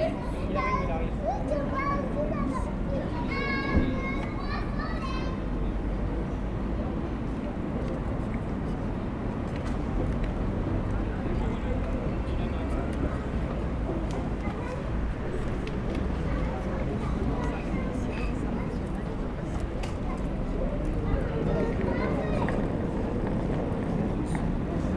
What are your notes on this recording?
Devant la gare de Grenoble, jeux d'enfants, les bruits de la ville.